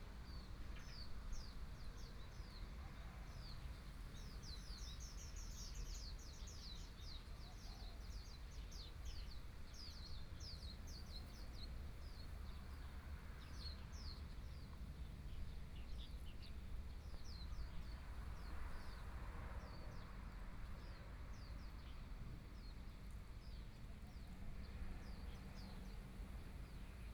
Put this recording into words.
In front of the temple, Traffic Sound, Birdsong sound, Small village, Sony PCM D50+ Soundman OKM II